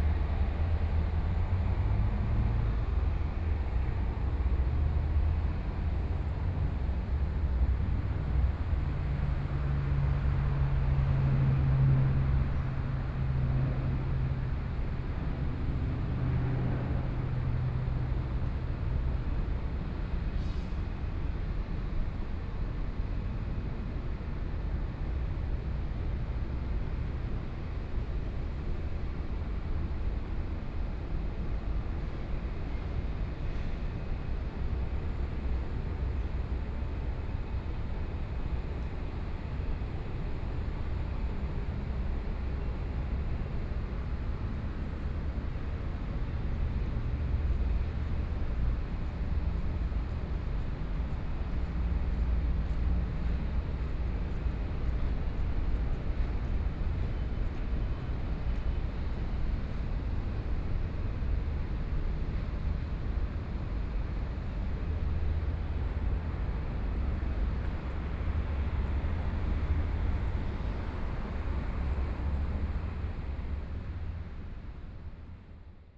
高雄國際航空站 (KHH), Taiwan - Environmental sounds
Environmental sounds, Airports near ambient sound
Kaohsiung City, Xiaogang District, 中山四路機車專用道, 14 May, ~09:00